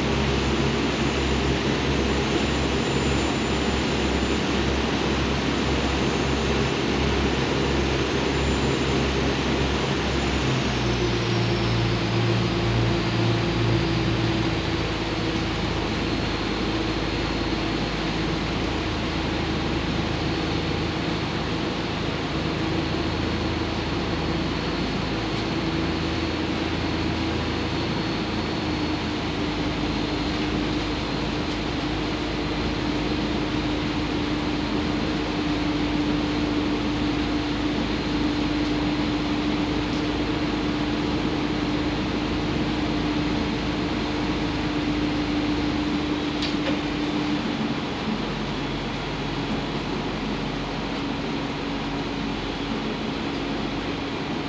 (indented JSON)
{
  "title": "Zuid, Rotterdam, Netherlands - Waterbus",
  "date": "2021-08-10 13:10:00",
  "description": "Recorded using Soundman binaural mics while traveling on the waterbus",
  "latitude": "51.91",
  "longitude": "4.51",
  "timezone": "Europe/Amsterdam"
}